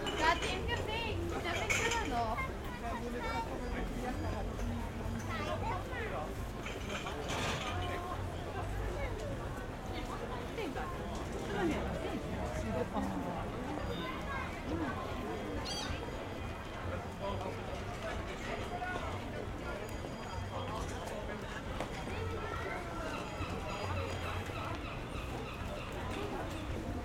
Vorstadt, Kiel, Deutschland - Christmas market 2016
Christmas Market 2016, pedestrian zone of Kiel, Germany
Zoom H6 Recorder X/Y capsule
Kiel, Germany, 17 December 2016